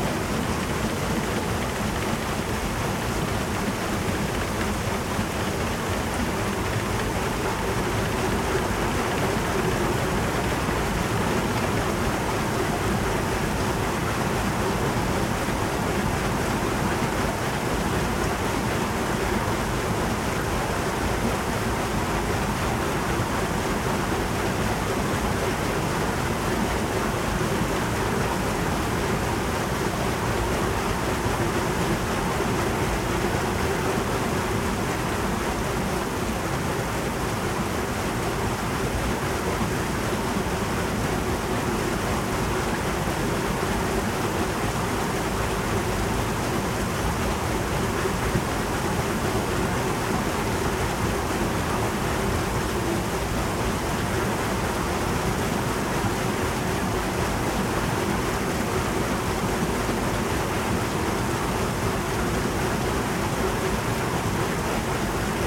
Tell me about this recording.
Moulin de Lugy - côte d'Opale, Roue hydraulique, Ambiance extérieure